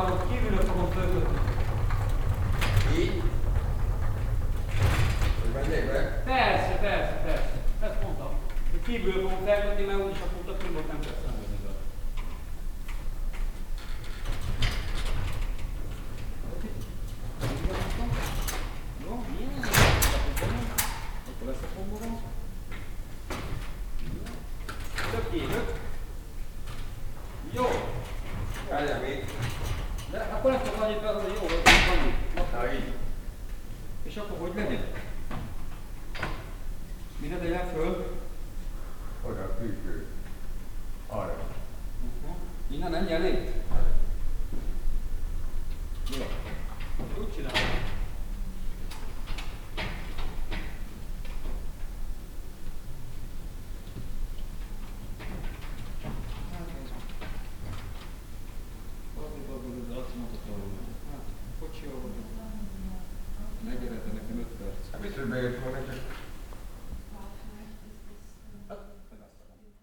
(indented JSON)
{
  "title": "budapest, trafo, theatre hall",
  "date": "2011-03-11 14:45:00",
  "description": "inside the theatre hall of the cultural venue rafo- technical setup of a play - hungarian technicians talking\ninternational city scapes - social ambiences, art spaces and topographic field recordings",
  "latitude": "47.48",
  "longitude": "19.07",
  "altitude": "108",
  "timezone": "Europe/Budapest"
}